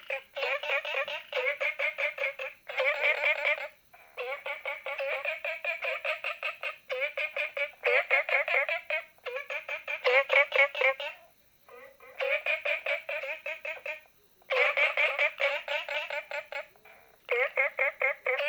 綠屋民宿, 桃米里 Taiwan - Frogs chirping
Frogs chirping, Ecological pool
Zoom H2n MS+XY